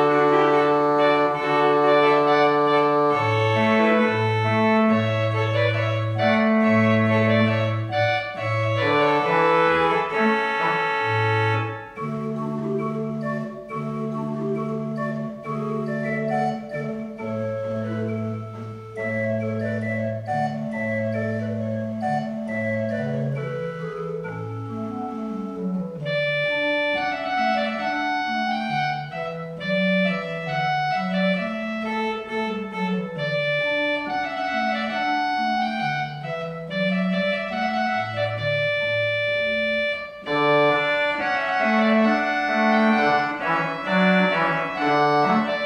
St Lawrence's Church, Crosby Ravensworth, Penrith, UK - St Lawrence's Church Organ
St Lawrence's newly renovated church organ. David Jones plays Jeremiah Clark's Trumpet Voluntary. The organ was built by Wilkinson’s of Kendal in the 19th century and is one of a very few remaining of its type. Pearl MS-8, SD MixPre 10t
England, United Kingdom